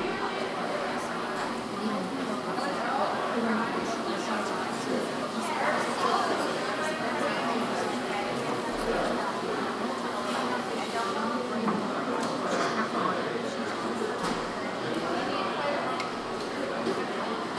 New Paltz, NY, USA - Element 93 Cafe
Element 93 Cafe is a food service option for the SUNY New Paltz community. The recording was taken using a Snowball condenser microphone and edited using Garage Band on a MacBook Pro. The recording was taken during a busy time of day